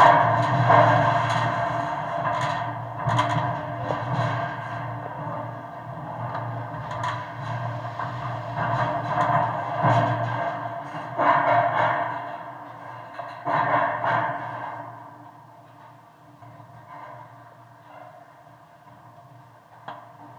Gdańsk, Poland - Most / Bridge / kontaktowe /contact mics
Most mikrofony kontaktowe, contact mics, rec. Rafał Kołacki